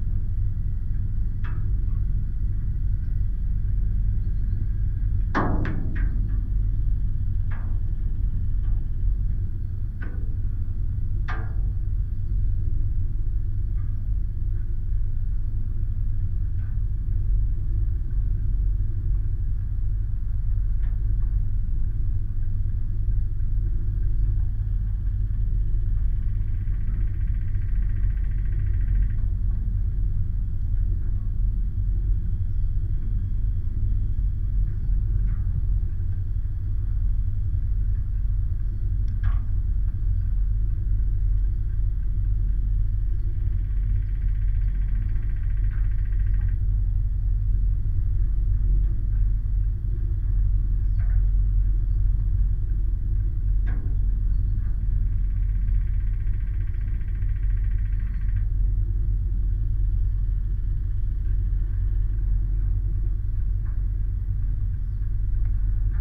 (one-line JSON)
{"title": "Utena, Lithuania, loops of rusty wire", "date": "2018-09-20 18:50:00", "description": "debris. some loops of rusty wire. contact mics.", "latitude": "55.52", "longitude": "25.58", "altitude": "98", "timezone": "Europe/Vilnius"}